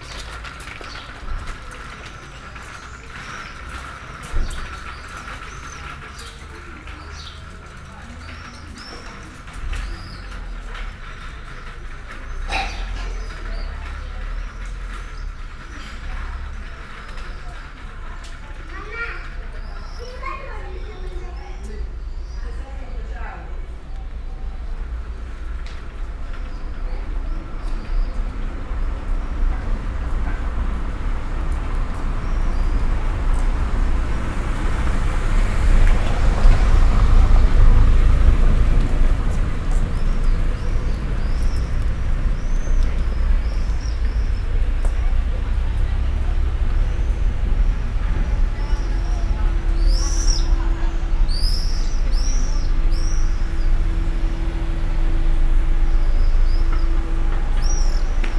Corso Roma, Serra De Conti AN, Italia - the stroller and the truck
Ambience of the street, a stroller coming form distance and a truck passing very noisy and loud.
(Binaural: Dpa4060 into Shure FP24 into Sony PCM-D100)